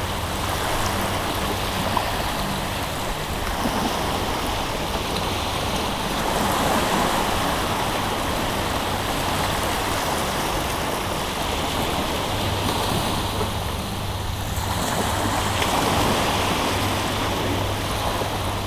Knokke-Heist, Belgique - The sea
Quiet recording of the sea during the beginning of the low tide, in the hoopoe district of Knokke called Het Zoute.
Knokke-Heist, Belgium, November 2018